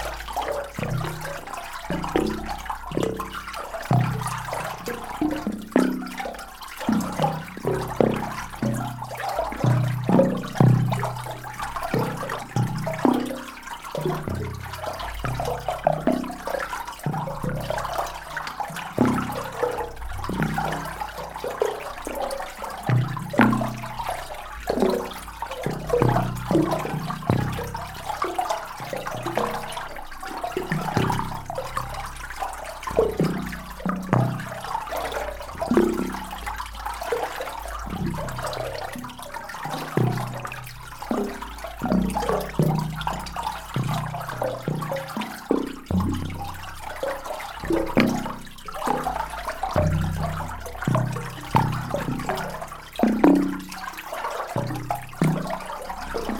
Differdange, Luxembourg - The very beautiful singing pipe
In an underground mine, water flowing into a pipe is making a delicious music. This is working only during winter times.
15 February 2015